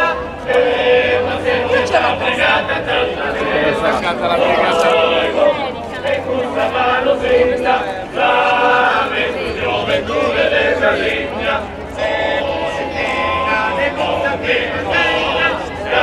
{"title": "Alghero Sassari, Italy - Fiera del Folklore", "date": "2005-08-08 00:05:00", "description": "This is a recording of one of the music performances at the Fiera del Folklore.", "latitude": "40.56", "longitude": "8.32", "altitude": "1", "timezone": "Europe/Rome"}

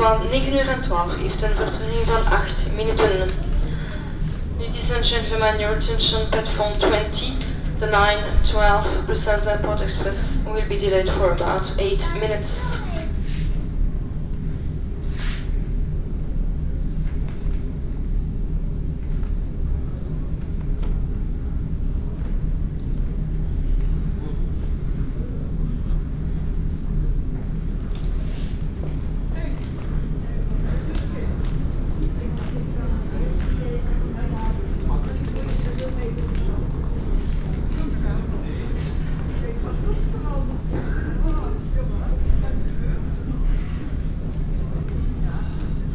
{"title": "Brussels, Midi Station, a homeless person cant sleep here", "date": "2008-11-05 07:32:00", "description": "Brussels, Midi Station, a homeless person can sleep here", "latitude": "50.84", "longitude": "4.33", "altitude": "26", "timezone": "Europe/Brussels"}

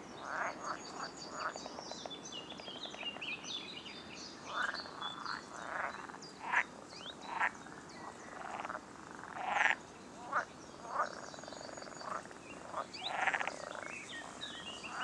{"title": "Pakalniai, Lithuania, swamp, frogs, wind", "date": "2020-05-09 15:50:00", "description": "sitting at the swamp in a windy day", "latitude": "55.43", "longitude": "25.48", "altitude": "164", "timezone": "Europe/Vilnius"}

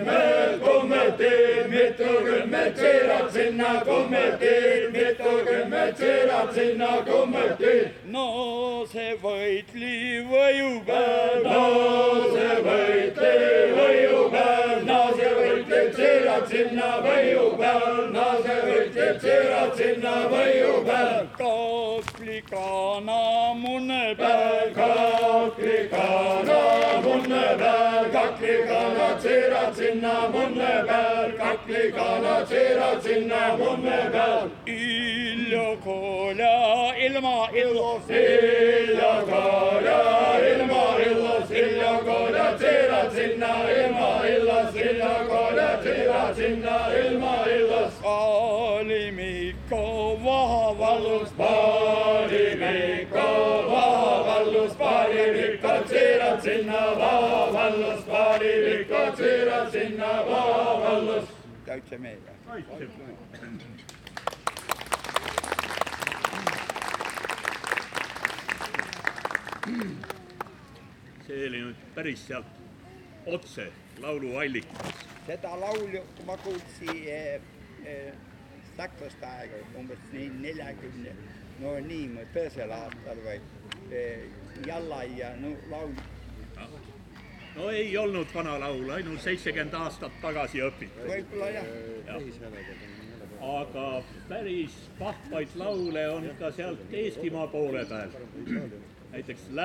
{"title": "Lossi, Dorpat, Estland - Lossi, Tartu - Male choir singing traditional Estonian songs in the park", "date": "2013-07-04 17:07:00", "description": "Lossi, Tartu - Male choir singing traditional Estonian songs in the park. Performance during the International Folklore Festival Baltica.\n[Hi-MD-recorder Sony MZ-NH900 with external microphone Beyerdynamic MCE 82]", "latitude": "58.38", "longitude": "26.72", "altitude": "51", "timezone": "Europe/Tallinn"}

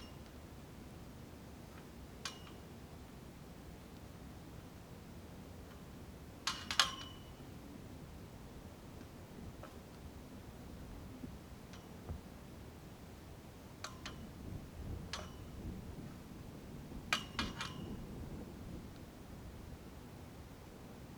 {"title": "Niedertiefenbach, Beselich - flag", "date": "2012-12-19 23:45:00", "description": "quite village, winter night, a flag in the low wind\n(Sony PCM D50)", "latitude": "50.44", "longitude": "8.14", "altitude": "214", "timezone": "Europe/Berlin"}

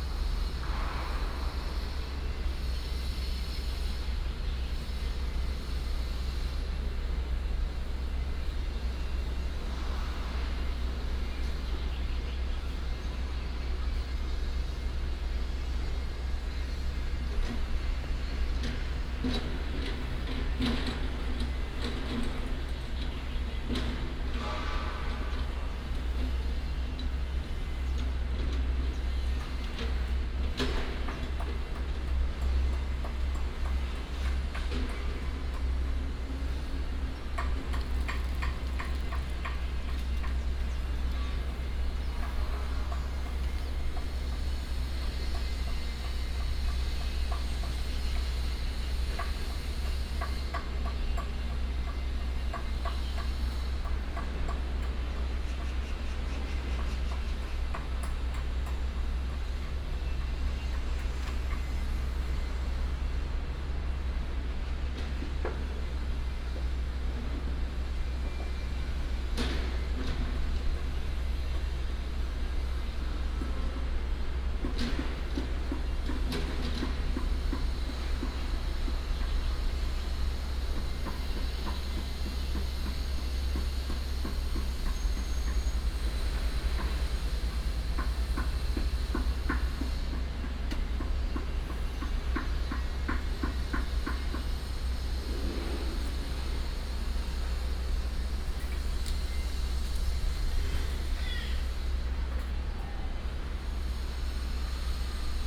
{"title": "敬軍新村, Hsinchu City - Construction sound", "date": "2017-09-27 15:35:00", "description": "In the old community, Construction sound, Binaural recordings, Sony PCM D100+ Soundman OKM II", "latitude": "24.79", "longitude": "121.00", "altitude": "59", "timezone": "Asia/Taipei"}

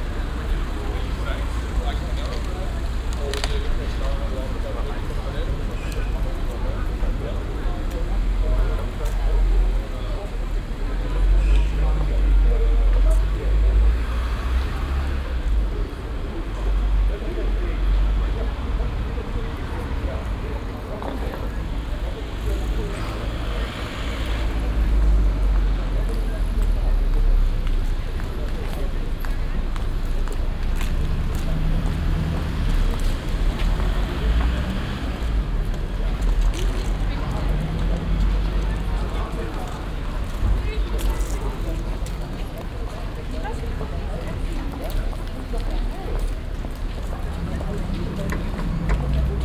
{"title": "cologne, breite strasse, platz, mittags", "date": "2008-06-04 11:53:00", "description": "soundmap: köln/ nrw\nmittagspause in der sonne auf dem kleinen platz an der breite strasse. verkehr, schritte, gesprächsfetzen\nproject: social ambiences/ listen to the people - in & outdoor nearfield recordings", "latitude": "50.94", "longitude": "6.95", "altitude": "58", "timezone": "Europe/Berlin"}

